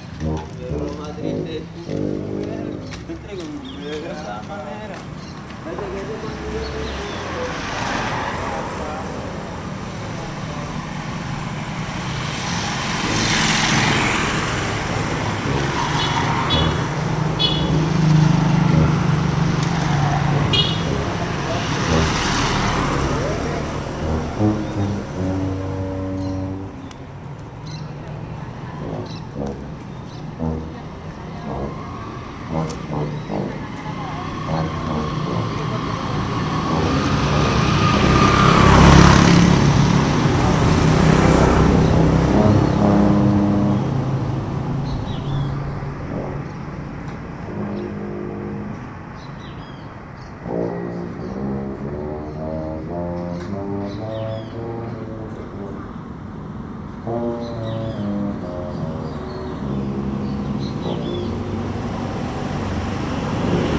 CALLE 2 # 10- 36 BARRIO ARRIBA, Mompós, Bolívar, Colombia - Trombón
Un trombonista de la banda del pueblo ensaya en la puerta de su casa.
30 April 2022